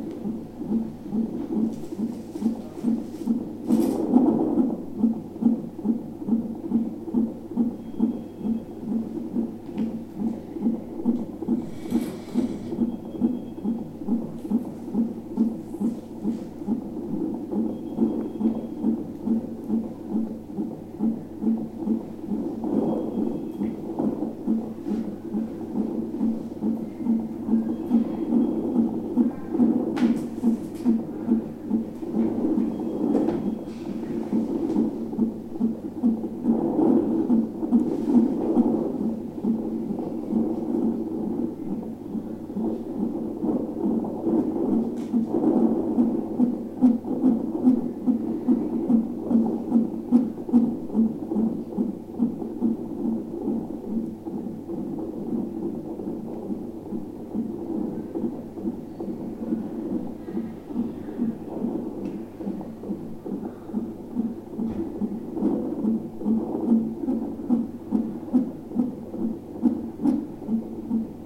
Iglesias CI, Italia - battiti di vita
reparto ostetricia ginecologia S. Barbara - tracciato - Tracce di vita